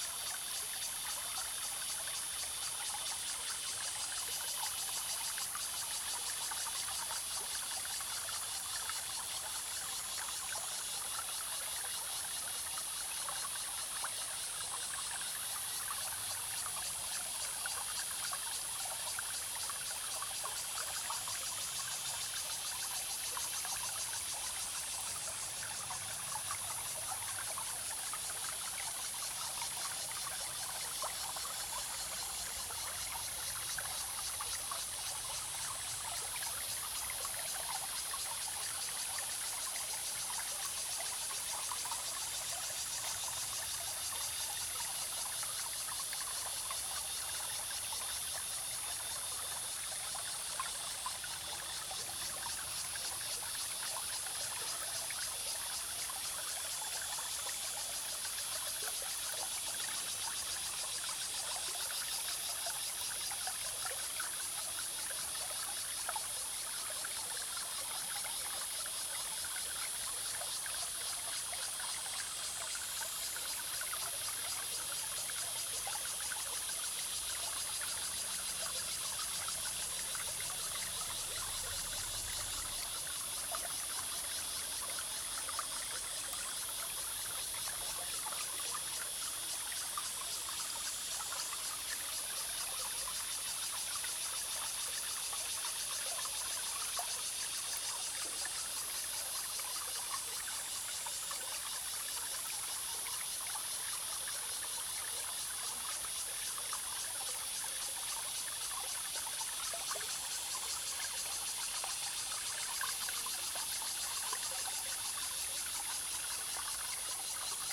Zhonggua Rd., Puli Township - stream and Cicada sounds
The sound of the stream, Cicada sounds
Zoom H2n MS+XY +Spatial audio
Puli Township, Nantou County, Taiwan